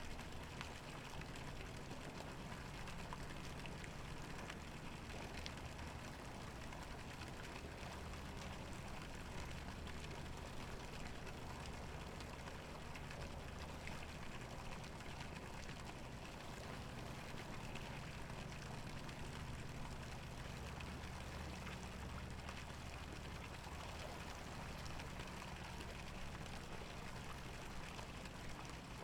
Huangpu District, Shanghai - The sound of water
The sound of water, Traveling by boat on the river, Binaural recording, Zoom H6+ Soundman OKM II
Shanghai, China, 28 November 2013